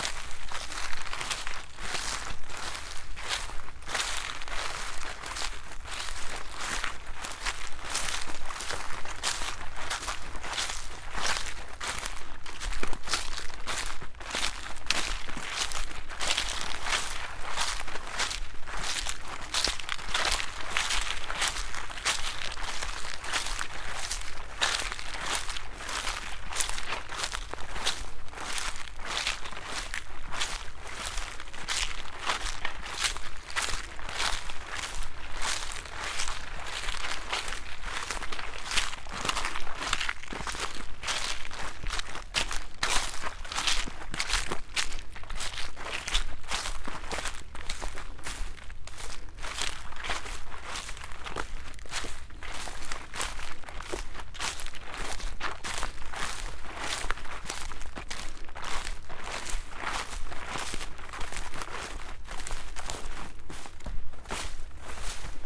walk, Šturmovci, Slovenia - textures
walk through stiff and soft grasses